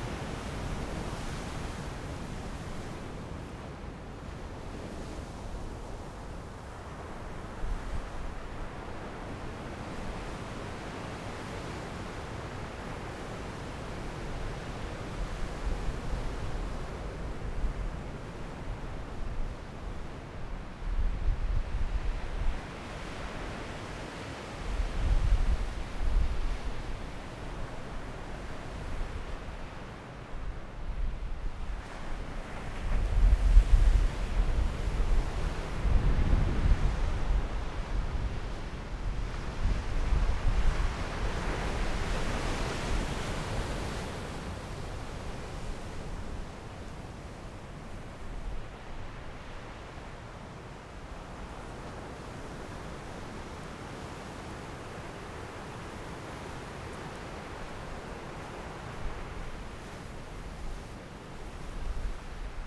Taitung County, Taiwan - Sound of the waves
At the beach, Sound of the waves, Fighter flight traveling through, Zoom H6 M/S + Rode NT4
Taitung City, Taitung County, Taiwan